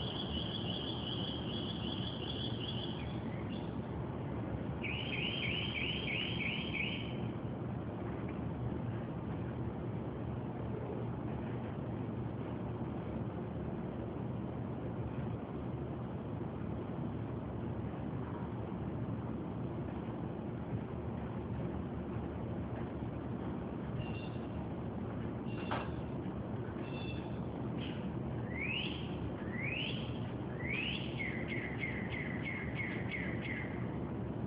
bird singing and chirping verious car alarm sounding songs in brooklyn - with occasional street noises such as sirens